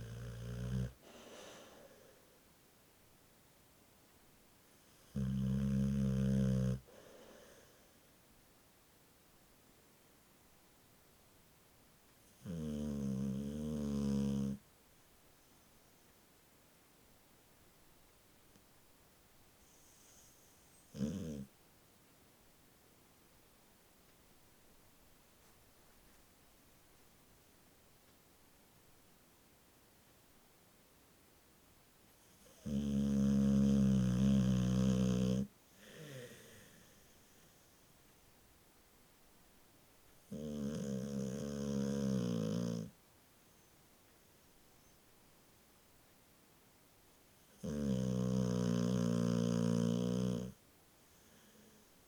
Luttons, UK - A sleep ... and snoring ... a bull mastiff ...
Bull mastiff asleep and snoring ... Olympus LS11 integral mics ...